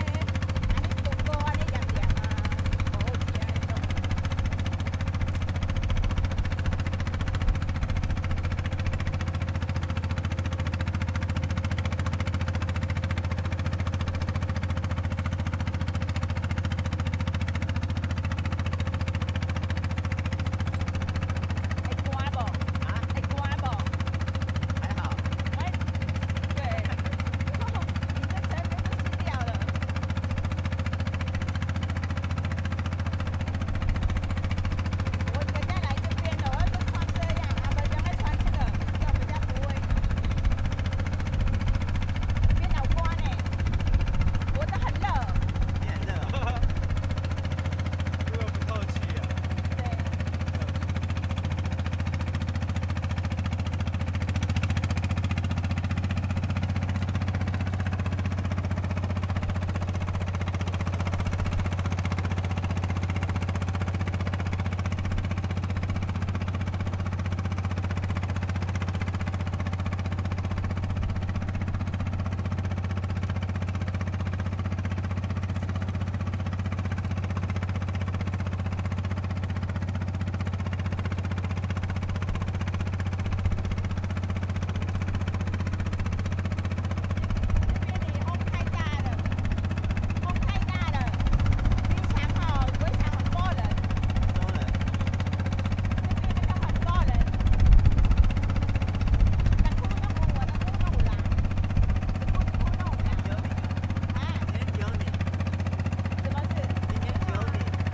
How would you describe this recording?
Small truck traveling at sea, The sound of the wind, Oysters mining truck, Very strong winds weather, Zoom H6 MS